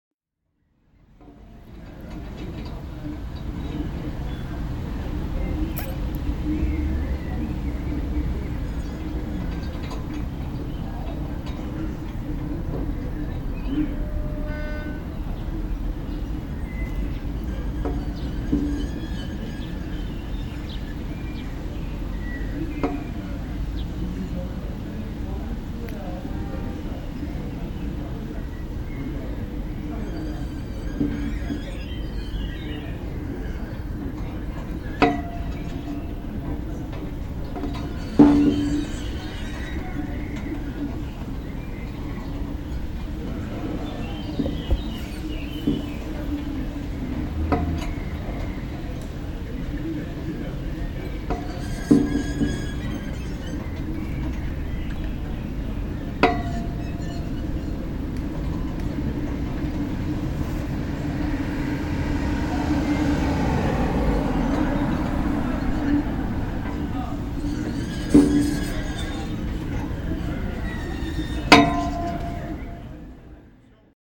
flagpoles in the wind
in front of the croatia radio and television building, part of the EBU sound workshop